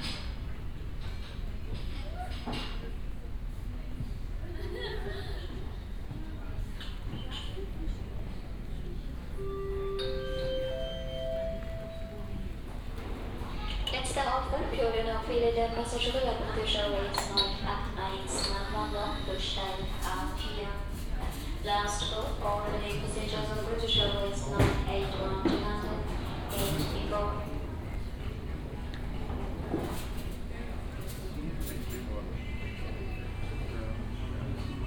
short early morning walk along terminal D while waiting for departure
Berlin, Germany